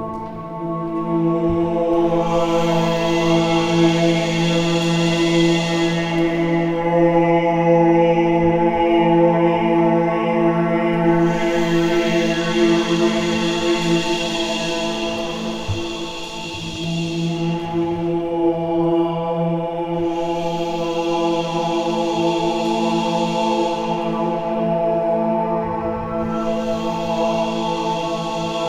Visual Arts Building, Iowa City, IA, USA - Voice Installation
Here is a recording of a voice piece that took place at the Drewelowe Gallery in the Visual Arts Building. This recording was recorded on a Tascam DR-100MKIII
3 April 2019, 13:45